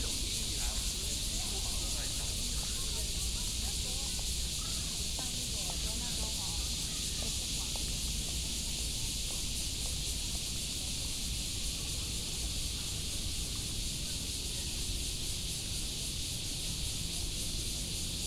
Zhoushan Rd., Da’an Dist., Taipei City - Walking in the university
Walking in the university, Visitor, Cicadas cry
Taipei City, Taiwan, 25 July 2015, 18:49